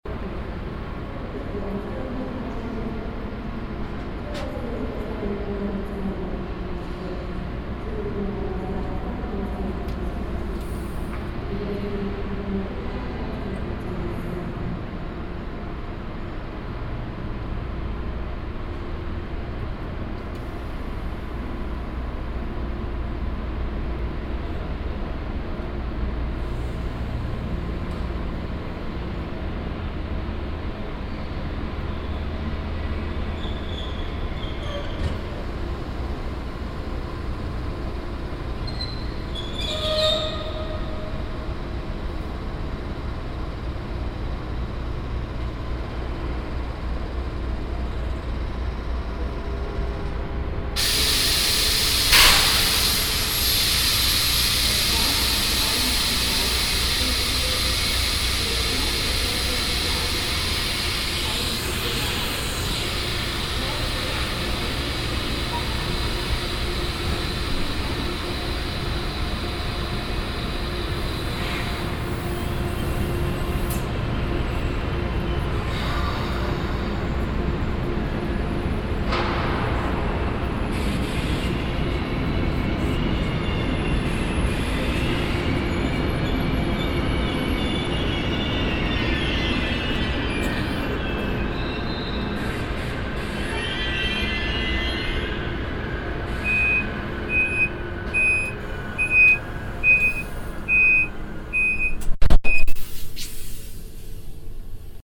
leipzig, main station, track 10, train driving in
atmosphere at leipzig main station - distant announcement, passengers pass by, a train driving in
soundmap d: social ambiences/ listen to the people - in & outdoor nearfield recordings
15 June 2009, ~10:00